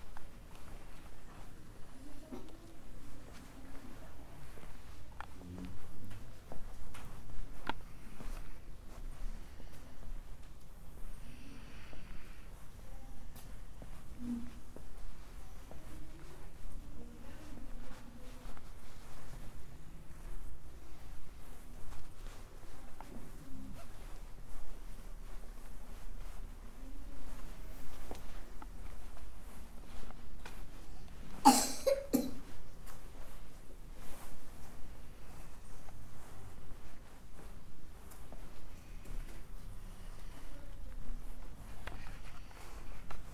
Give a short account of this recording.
C'est l'heure de la sieste chez les petite section de l'école Jean Rostand, It's nap time at Jean Rostand